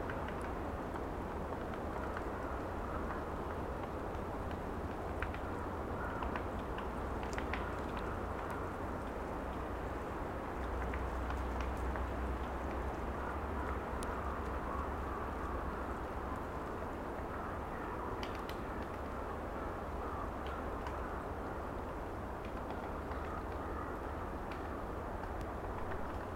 Vastse-Kuuste, Põlva County, Estonia - Wind in forest
Wind in trees, woodpecker.